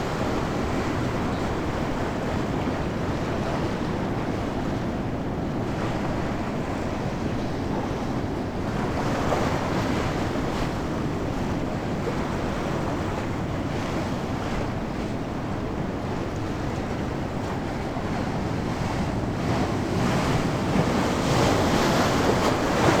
sassnitz: seebrücke - the city, the country & me: pier
crashing waves
the city, the country & me: october 5, 2010